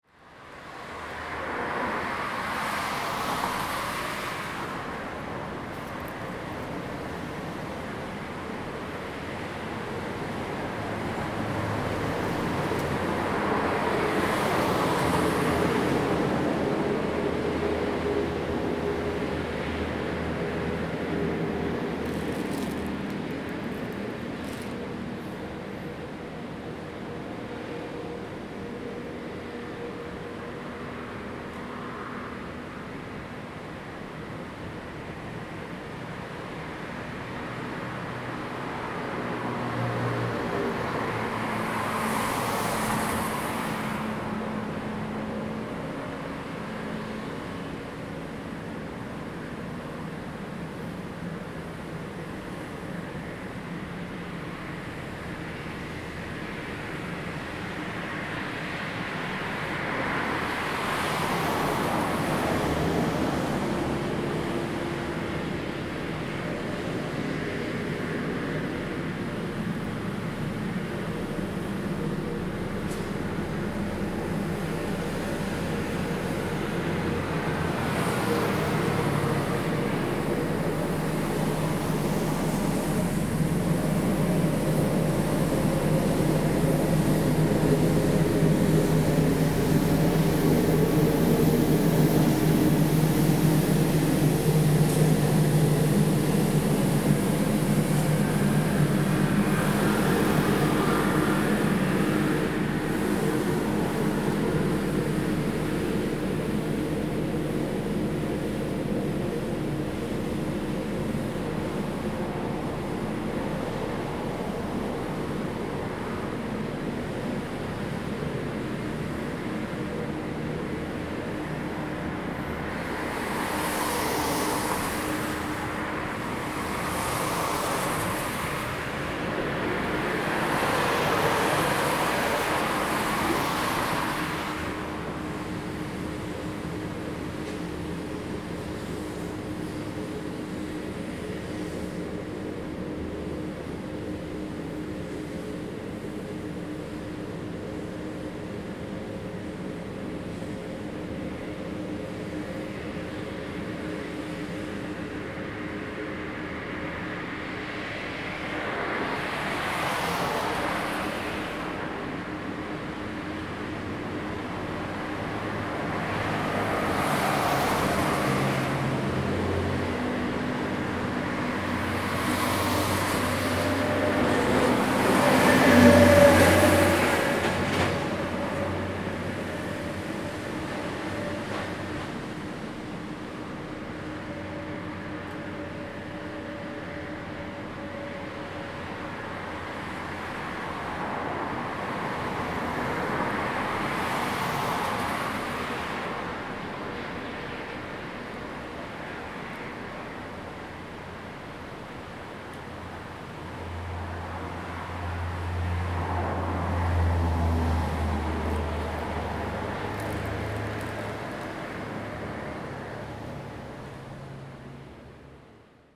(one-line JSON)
{"title": "淡金公路, 新北市石門區德茂里 - In the bus station", "date": "2016-04-17 07:37:00", "description": "In the bus station, Traffic Sound\nZoom H2n MS+XY", "latitude": "25.28", "longitude": "121.52", "altitude": "18", "timezone": "Asia/Taipei"}